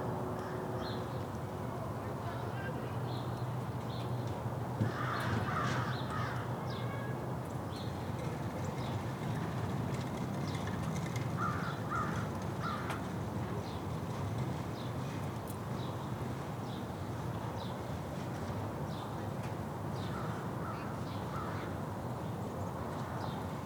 {"title": "Matoska Park - Matoska Park Part 1", "date": "2022-03-15 14:16:00", "description": "The sound of a warm March day at Matoska Park in White Bear Lake, MN", "latitude": "45.09", "longitude": "-93.00", "altitude": "281", "timezone": "America/Chicago"}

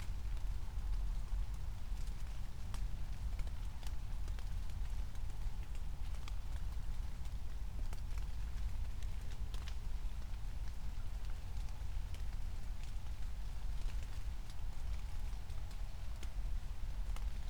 {"title": "Königsheide, Berlin - forest ambience at the pond", "date": "2020-05-23 03:00:00", "description": "3:00 drone, still raining, a siren in the distance", "latitude": "52.45", "longitude": "13.49", "altitude": "38", "timezone": "Europe/Berlin"}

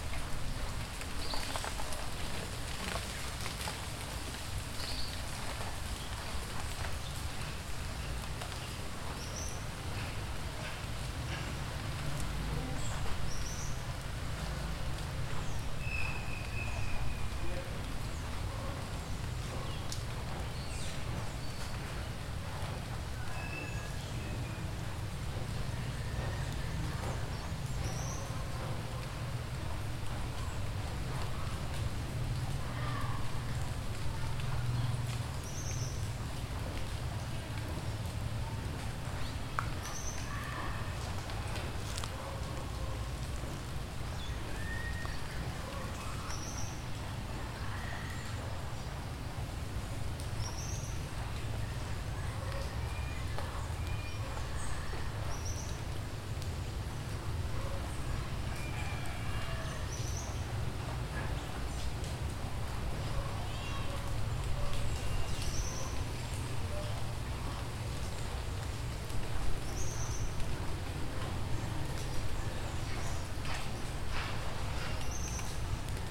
{"title": "Rivera, Huila, Colombia - AMBIENTE CASA DE LA CULTURA DE RIVERA", "date": "2018-06-20 17:40:00", "description": "GRABACION STEREO, TASCAM DR-40 REALIZADO POR: JOSÉ LUIS MANTILLA GÓMEZ.", "latitude": "2.78", "longitude": "-75.26", "altitude": "720", "timezone": "GMT+1"}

